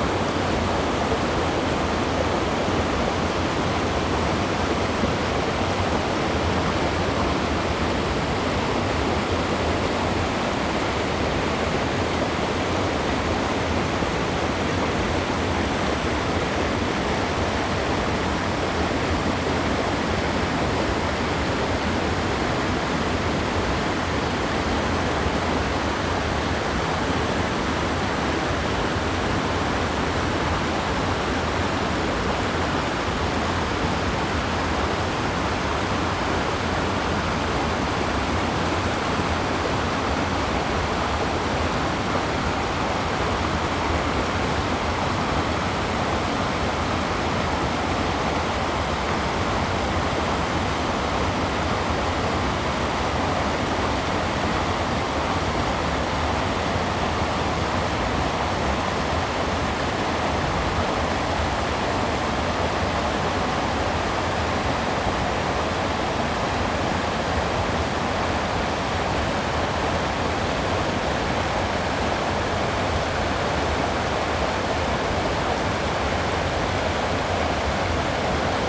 玉門關, 埔里鎮成功里 - small waterfall
The sound of the river, small waterfall
Zoom H2n MS+XY +Spatial audio